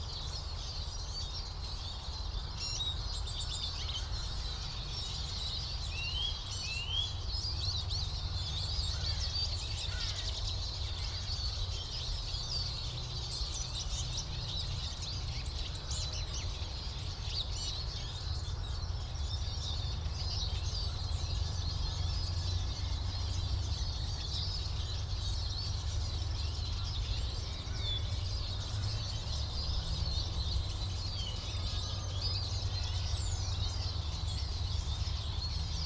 Am Sandhaus, Berlin-Buch, Deutschland - flock of birds
a flock of birds, most probably Eurasian siskin (Erlenzeisig, Spinus spinus), distant traffic noise from the nearby Autobahn
(Sony PCM D50, DPA4060)
Berlin, Germany, March 2019